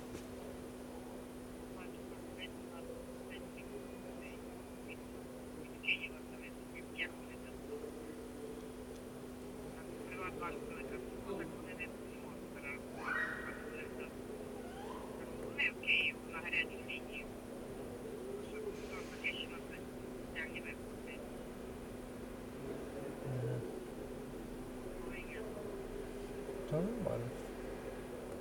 Vyšné Nemecké, Slovakia - Crossing into Ukraine
Waiting at the Slovak-Ukrainian border with a guy from Uzhhorod. Binaural recording.